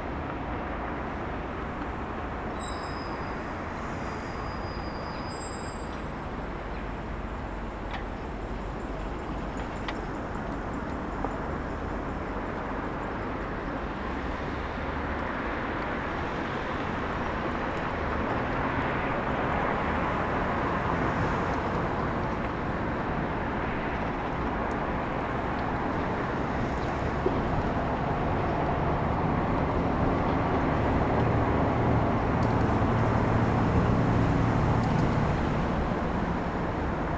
{
  "title": "Żołnierska, Olsztyn, Poland - Obserwatorium - Wschód",
  "date": "2014-06-03 16:53:00",
  "description": "Recorded during audio art workshops \"Ucho Miasto\" (\"Ear City\"):",
  "latitude": "53.77",
  "longitude": "20.49",
  "altitude": "141",
  "timezone": "Europe/Warsaw"
}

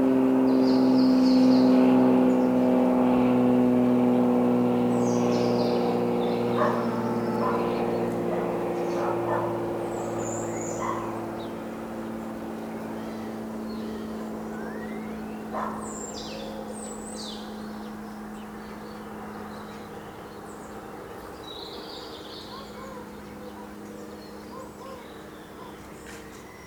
{
  "title": "Pairana (PV), Italy - Autumn morning close to countryside",
  "date": "2012-10-20 10:30:00",
  "description": "Birds, dogs, church bells, a distant cow. Rumblings from the sky due to airplanes landing in Milan try to corrupt the peace of this place",
  "latitude": "45.32",
  "longitude": "9.29",
  "altitude": "89",
  "timezone": "Europe/Rome"
}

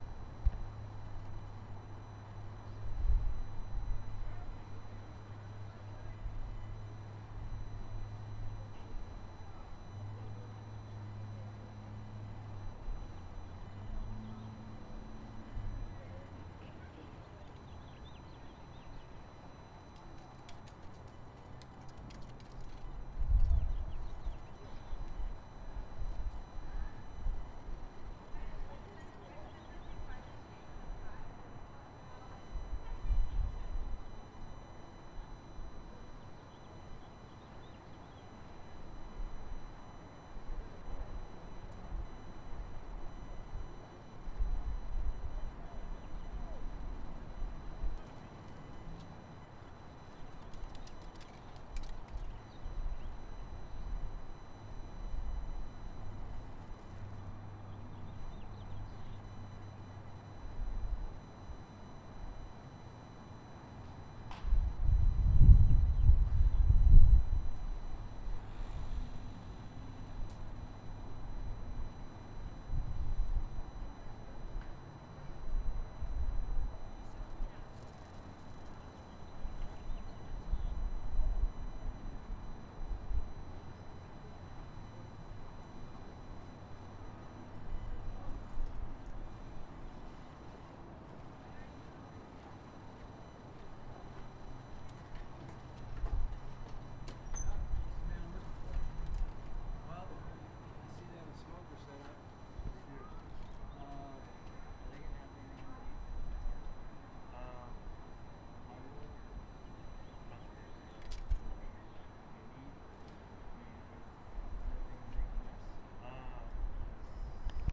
{
  "title": "E Cache La Poudre St, Colorado Springs, CO, USA - Tutt Library Drone",
  "date": "2018-04-26 18:34:00",
  "description": "Documenting the peculiar high pitched drone outside of Tutt library, occasional leaves, bikers, and pedestrians roll by.",
  "latitude": "38.85",
  "longitude": "-104.82",
  "altitude": "1847",
  "timezone": "America/Denver"
}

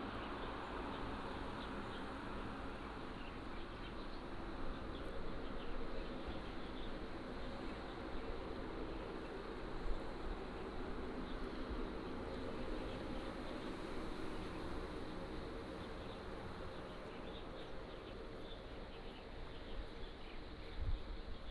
{
  "title": "國立馬祖高級中學, Nangan Township - Next to playground",
  "date": "2014-10-15 07:03:00",
  "description": "Next to playground, Aircraft flying through, Birds singing, Sound of the waves",
  "latitude": "26.15",
  "longitude": "119.95",
  "altitude": "10",
  "timezone": "Asia/Taipei"
}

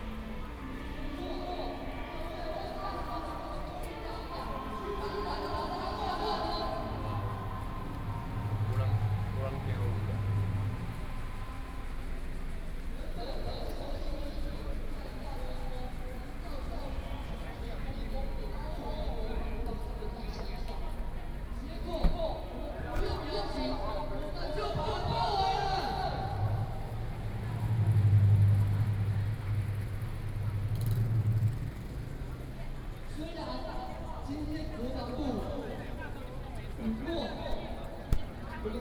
{
  "title": "Jinan Road, Taipei - Protest party",
  "date": "2013-07-20 18:19:00",
  "description": "Protest party, Zoom H4n+ Soundman OKM II",
  "latitude": "25.04",
  "longitude": "121.52",
  "altitude": "18",
  "timezone": "Asia/Taipei"
}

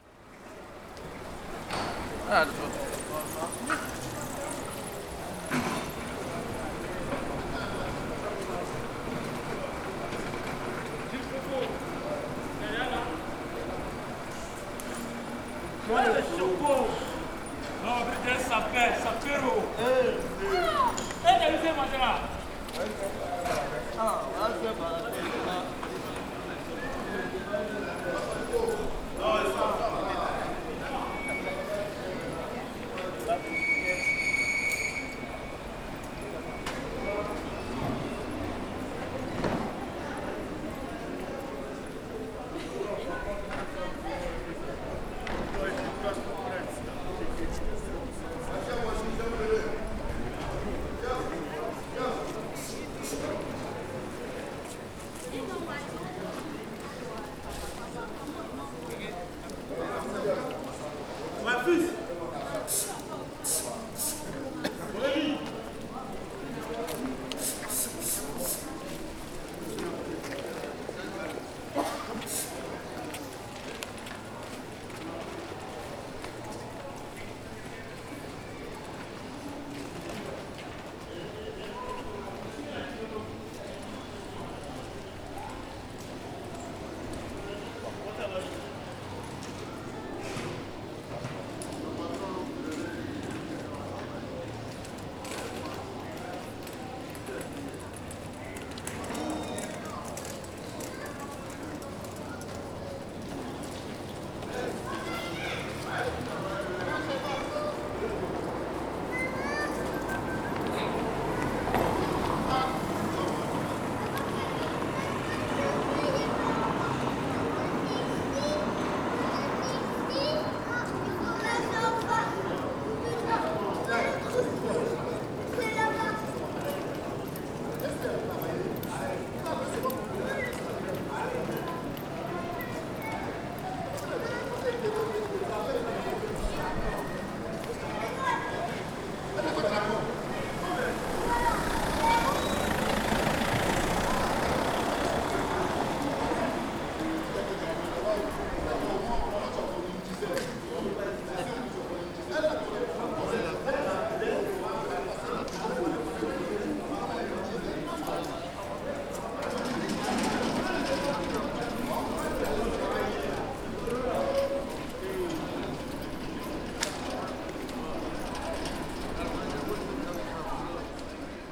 {
  "title": "Rue de la République, Saint-Denis, France - Intersection of R. Gabriel Péri + R. de la Republique",
  "date": "2019-05-25 10:30:00",
  "description": "This recording is one of a series of recording, mapping the changing soundscape around St Denis (Recorded with the on-board microphones of a Tascam DR-40).",
  "latitude": "48.94",
  "longitude": "2.36",
  "altitude": "32",
  "timezone": "Europe/Paris"
}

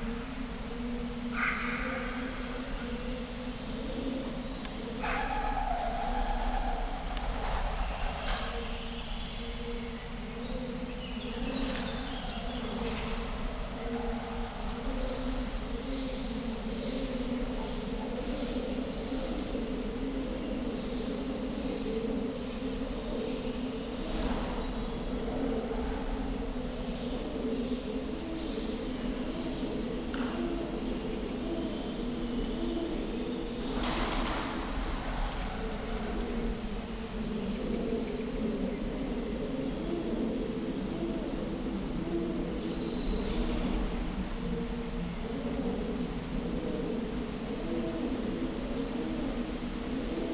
{
  "title": "Unnamed Road, Bremen, Germany - Valentin bunker, birds",
  "date": "2020-05-12 15:10:00",
  "description": "The Valentin bunker in Bremen never got up and running in time during the war. Despite being heavily bombed, its brutal structure still remains; a chilling account of the horrors, forced labour and the crazed megalomania of the war. The bunker has become a habitat for birds, pigeons and swallows that nest and fly through this vast space.",
  "latitude": "53.22",
  "longitude": "8.50",
  "altitude": "9",
  "timezone": "Europe/Berlin"
}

